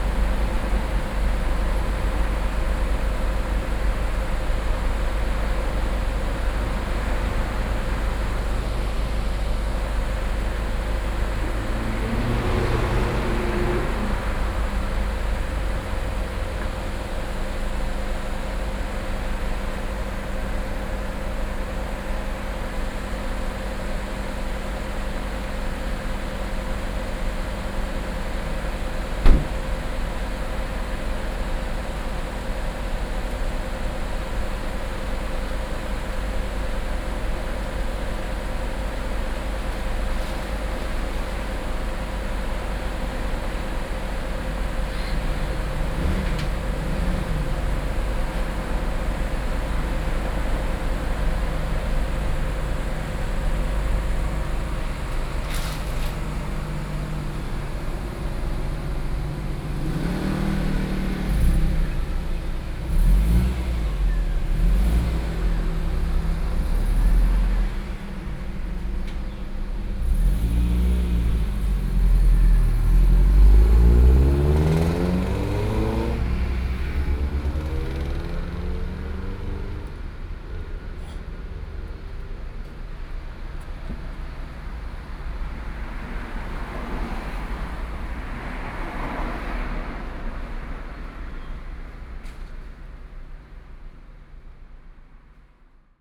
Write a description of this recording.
In the parking lot, In front of the convenience store, The weather is very hot